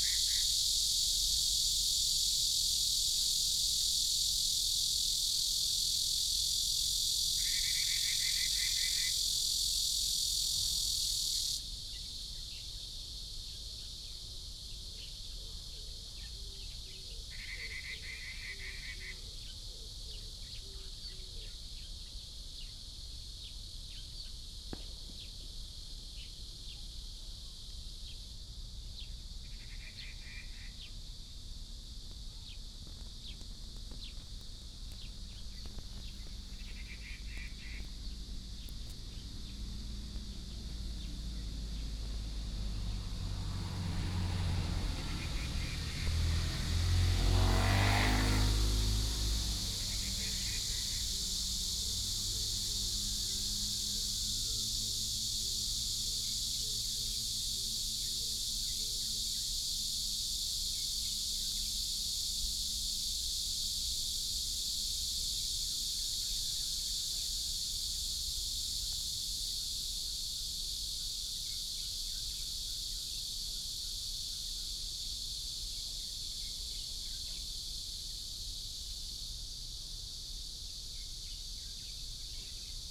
Zhonglu, Bade Dist., Taoyuan City - Birds and Cicadas
Birds and Cicadas, traffic sound